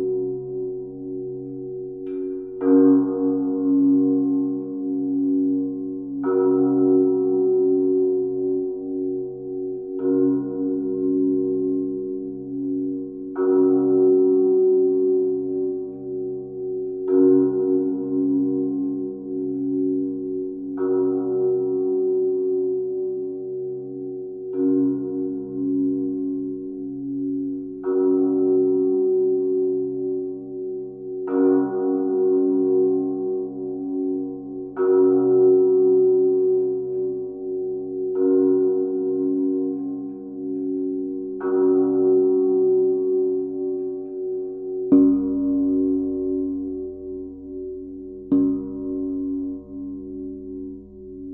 Playing with a new metallic barrier surrounding the college school. I noticed these huge steel bars would be perfect to constitude a gigantic semantron. So I tried different parts. Recorded with a contact microphone sticked to the bars.
10 August 2017, 19:10